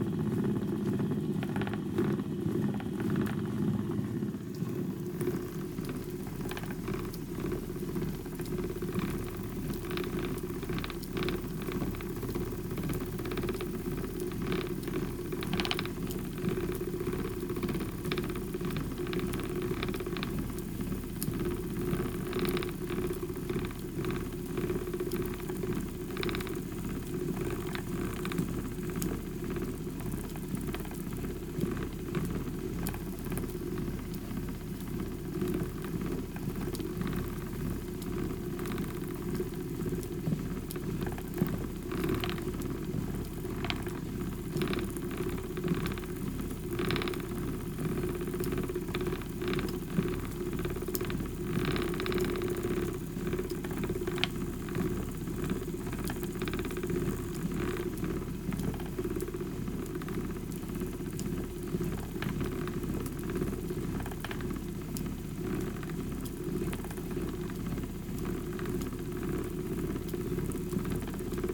This is the sound of Elizabeth Johnston - AKA Shetland Handspun - spinning Shetland wool on an old Shetland wheel. Elizabeth Johnston produces outstanding handspun wool which she dyes with natural dyes such as madder and indigo. Elizabeth gets the best fleeces that she can through the Shetland Woolbrokers; once she has a few really nice fleeces, she hand spins and then dyes them. This is because it causes less damage to the wool fibres if they are spun before being dyed, rather than the other way around. As well as being an amazing spinner and dyer, Elizabeth is a talented knitter. I loved the afternoon that I spent with her, listening to her spinning wheels, talking about the rhythm and whirr of wheels, and looking through all the gorgeous hanks of handspun she had in her studio. In this recording, she is spinning wool and I am moving my microphones around the different parts of the wheel.
Shetland, Shetland Islands, UK, 7 August